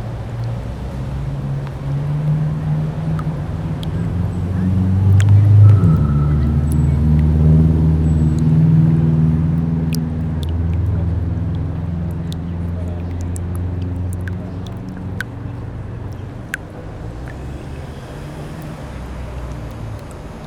Victoria st
Rock sculpture at the bottom of Albert Park
Auckland, New Zealand, 1 October 2010